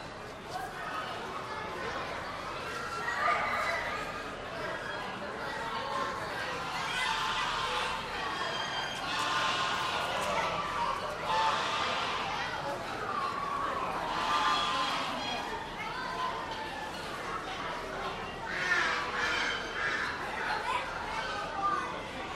Kansas State Fairgrounds, E 20th Ave, Hutchinson, KS, USA - Northwest Corner, Poultry Building
An Old English Game fowl (black breasted) talks. Other poultry are heard in the background. Stereo mics (Audiotalaia-Primo ECM 172), recorded via Olympus LS-10.
9 September 2017, 16:01